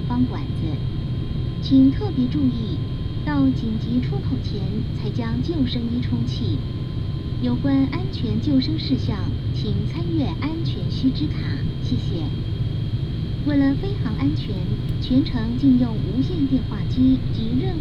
Beigan Airport, Taiwan - In the cabin
In the cabin